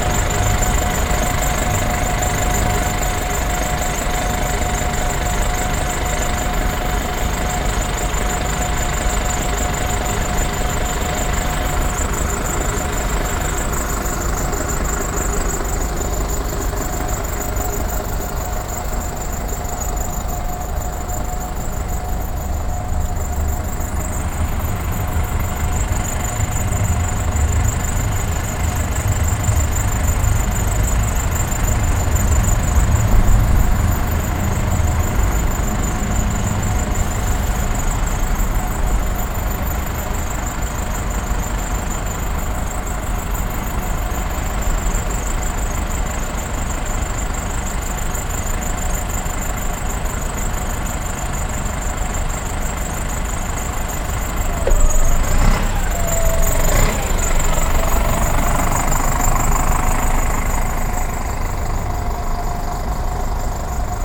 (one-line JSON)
{"title": "cologne, breslauer platz, bus station", "description": "at the main bus station, a bus driving in and standing with running engine\nsoundmap nrw - social ambiences and topographic field recordings", "latitude": "50.94", "longitude": "6.96", "altitude": "54", "timezone": "Europe/Berlin"}